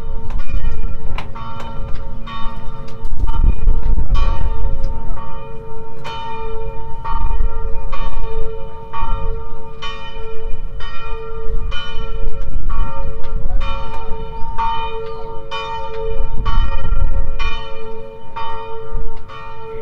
Church Bells at Zlarin, Croatia - (788 UNI) Church Bells at Sunday
Sunday early morning (6:30 am) bells recorded from a boat, thus the crackling sound, wind, and voices.
Recorded with UNI mics of Tascam DR 100 Mk3.